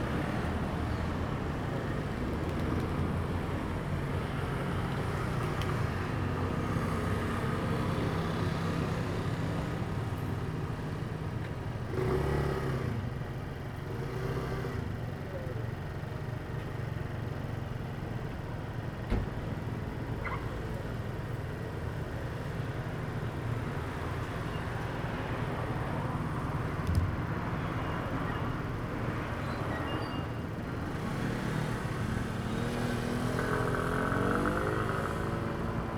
Hankou St., Chenggong Township - In front of the convenience store
In front of the convenience store, Traffic Sound
Zoom H2n MS +XY